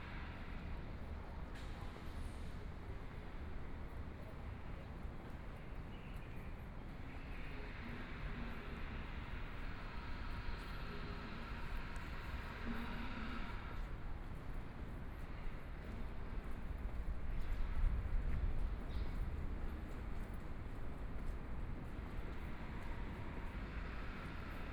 中山區正守里, Taipei City - walking in the Street
walking in the Street, Birds sound, Traffic Sound
Please turn up the volume a little. Binaural recordings, Sony PCM D100+ Soundman OKM II
Taipei City, Taiwan